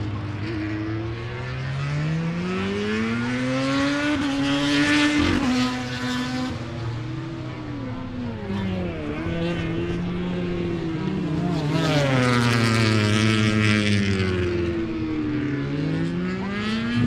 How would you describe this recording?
british motorcycle grand prix 2007 ... motogp free practice 3 ... one point stereo mic ... audio technica ... to minidisk ... time approx ...